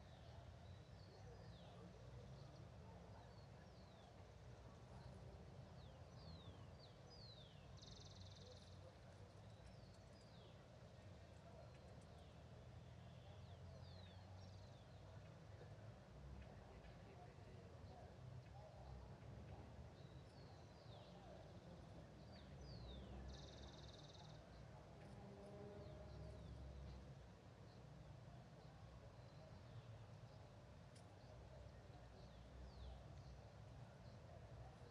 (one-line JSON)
{"title": "Cl., Bogotá, Colombia - Ambiente Parque Cedro Golf", "date": "2021-05-16 08:00:00", "description": "In this ambience you can perceive the nature that surrounds the groups in the labyrinth behind the show place, a place full of lots of green areas.", "latitude": "4.73", "longitude": "-74.03", "altitude": "2579", "timezone": "America/Bogota"}